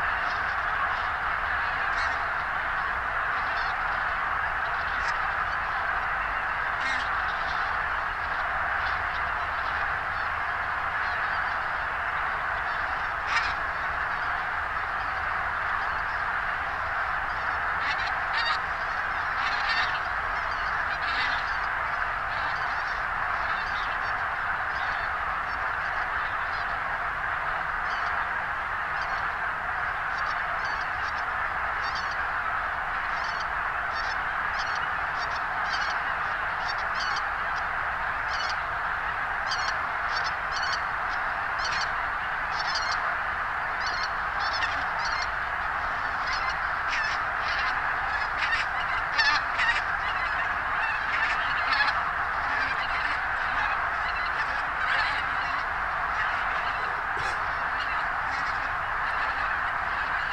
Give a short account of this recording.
1000 zugvögel, gänse und kraniche, sammeln sich am gülper um anfang november nach süden/westen zu ziehen / thousands of cranes and geese (goose) meeting at a lake in late autumn / migliaia di gru e oche si raggruppano a un lago in autunno